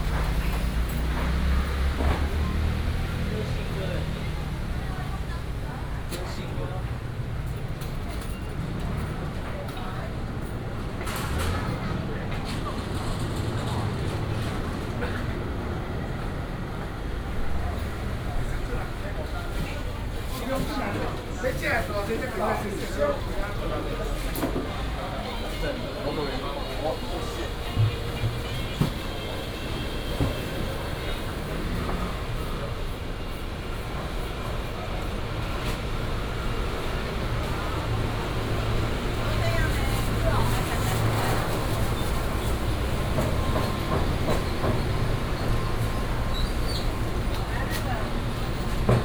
Fumin Rd., Wanhua Dist., Taipei City - Walking in the traditional market
Walking in the traditional market, Traffic sound, Before the start of the business is in preparation
Taipei City, Wanhua District, 富民路81號, May 2017